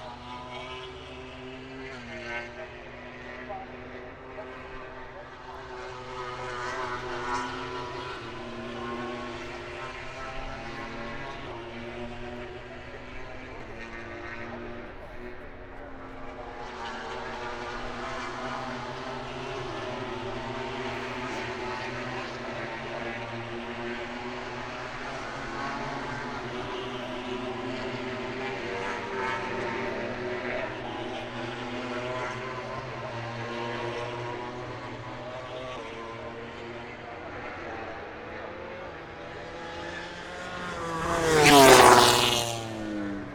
British Motorcycle Grand Prix 2018 ... moto grand prix ... free practice four ... maggotts ... lavalier mics clipped to baseball clap ...